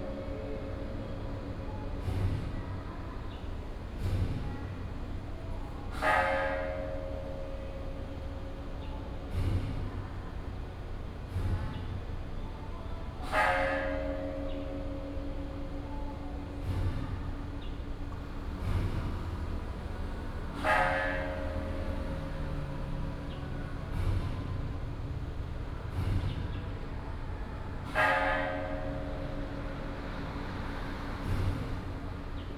德林寺, Luzhu Dist., Taoyuan City - In the temple
In the temple, traffic sound, firecracker, Bells, drum
Luzhu District, Taoyuan City, Taiwan